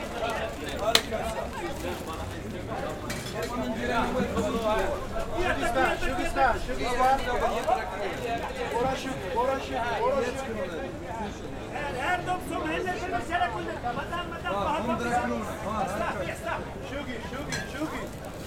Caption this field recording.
Flors barates! Cheap flowers! Flores baratas!